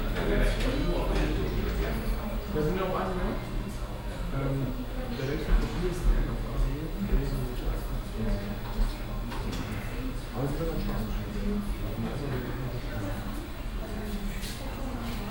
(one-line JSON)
{"title": "cologne, richmodstrasse, verkaufsstelle telefonanbieter", "date": "2008-09-02 17:24:00", "description": "atmosphere in a local telephon shop - talks and mobile sounds plus clicks on computer keyboards\nsoundmap nrw - social ambiences - sound in public spaces - in & outdoor nearfield recordings", "latitude": "50.94", "longitude": "6.95", "altitude": "66", "timezone": "Europe/Berlin"}